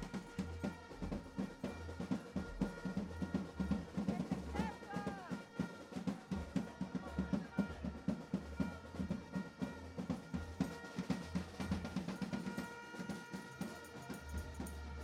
Israeli Prime Minister Residence, Jerusalem - Crime Minister Protest
Tens of thousands of demonstrators gathered for a final protest, 3 days before the election, in front of the official residence of the corrupt Israeli Prime Minister, Netanyahu. A demonstration that marks 9 consecutive months of popular protest across the country that led to the overthrow of the government. The demonstrators are demanding a change of government, the preservation of democracy and the prosecution of Netanyahu for bribery, fraud and breach of trust.